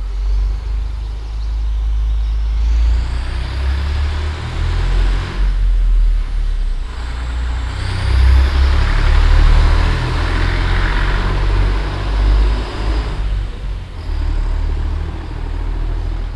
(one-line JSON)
{"title": "wengen, garden", "date": "2011-05-31 19:01:00", "description": "In einem, Privatgarten an einem frühen Frühlingsmorgen. Die Vögel im Wind und der Verkehr.\nInside a private garden in the early mornig time in spring. The birds in the wind and the traffic.\nProjekt - soundmap d - topographic field recordings and social ambiences", "latitude": "49.06", "longitude": "11.17", "altitude": "563", "timezone": "Europe/Berlin"}